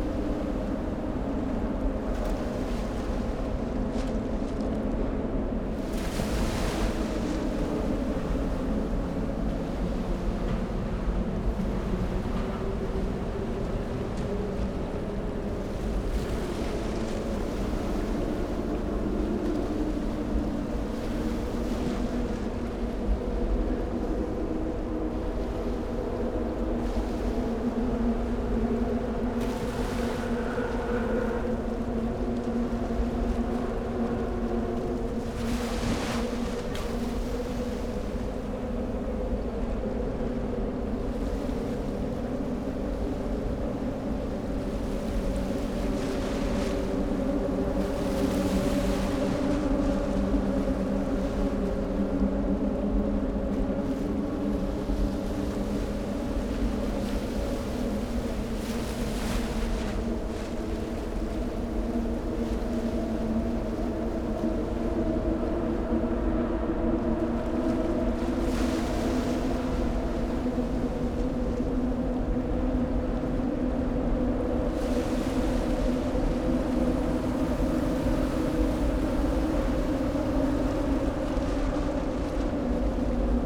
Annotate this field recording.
place revisited on a warm October afternoon (Sony PCM D50, DPA4060)